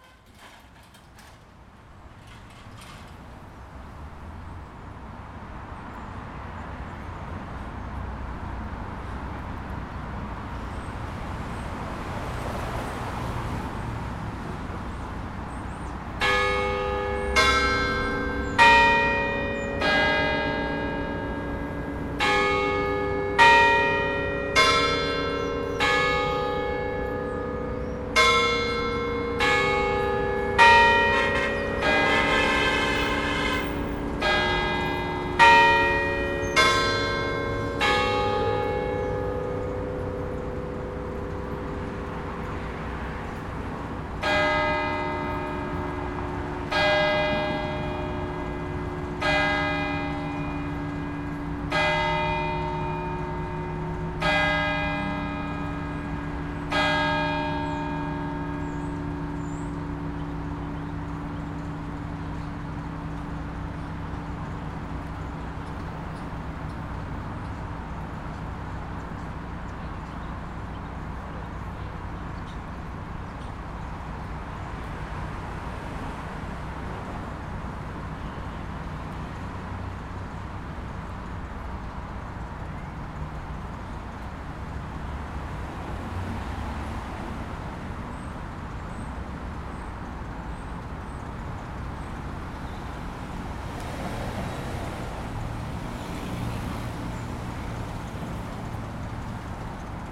Bells signaling 6 o'clock as well as local traffic. Recorded using the onboard Zoom H4n microphones.
Baltimore, MD, USA